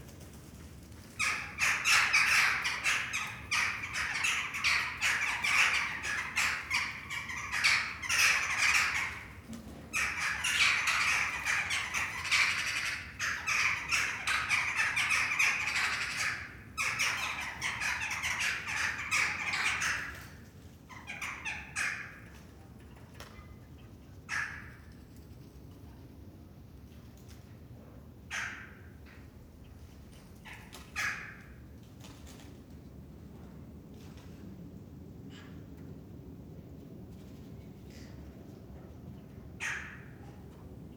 Den Haag, Netherlands - Birds fighting
Every evening around the same time there's a major dispute in our courtyard about which birds are going to sleep in which trees. Here's a short outtake (it goes on for ages). Crows, magpies, starlings and sometimes a blackbird. Seems like the current situation is encouraging birds and animals to take over the city more and more.
Recorded with a Soundfield ST350, Binaural decode.
25 March, 19:20